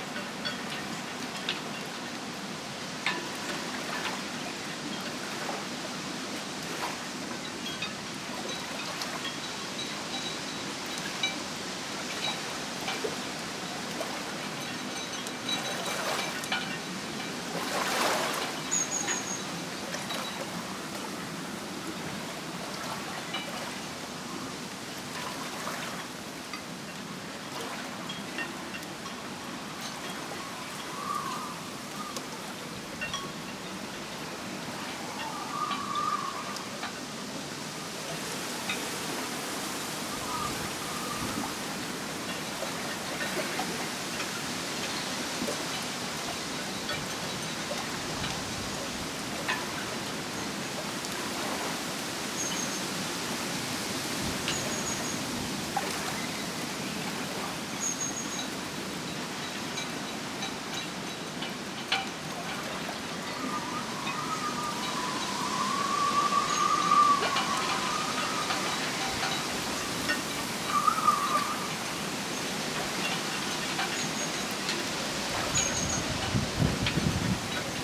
Another Place, Penrith, UK - Harbour sounds
Recorded with LOM Mikro USI's and Sony PCM-A10.
England, United Kingdom, 11 September 2020